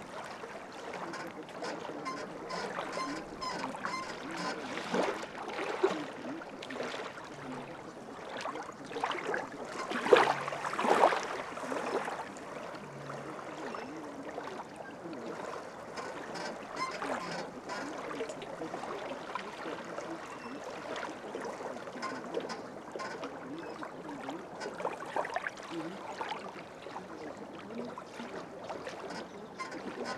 Lithuania, Dusetos, at the lake Sartai
at the lake Sartai, birds fighting for place on abandoned pontoon bridge, the sound of rusty pontoons, some old women chattering
17 April 2011, 17:00